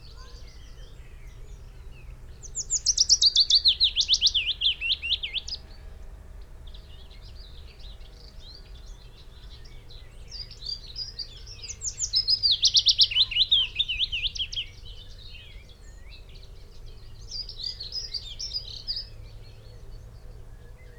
Malton, UK - willow warbler soundscape ...
willow warbler soundscape ... xlr sass on tripod to zoom h5 ... bird song ... calls ... from ... wood pigeon ... yellowhammer ... chaffinch ... pheasant ... wren ... dunnock ... blackcap ... crow ... blackbird ... goldfinch ... linnet ... unattended time edited extended recording ...
Yorkshire and the Humber, England, United Kingdom, 8 May 2022